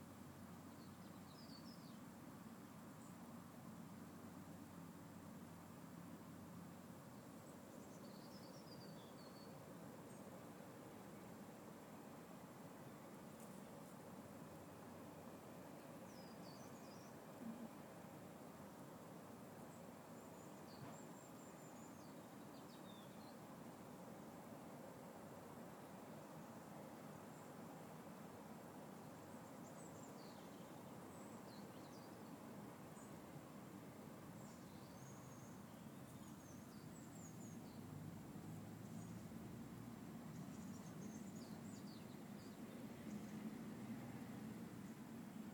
Hagenauer Strasse, Wiesbaden, Parkplatz
Früh am Morgen